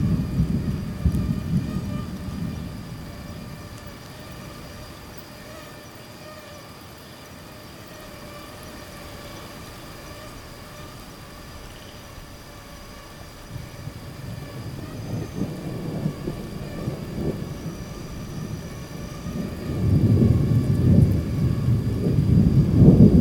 Tangará, Rio Acima - MG, 34300-000, Brasil - Mosquitoes and light rain with thunderstorms
Evening in the interior of Minas Gerais, Brazil.
Mosquitoes and light rain with thunderstorms.
Minas Gerais, Região Sudeste, Brasil